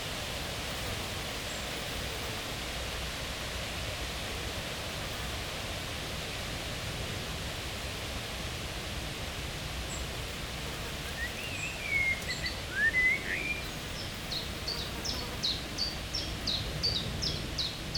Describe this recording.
Spring time, hot weather, a lot of wind in the leaves and alone with the big lime-tree.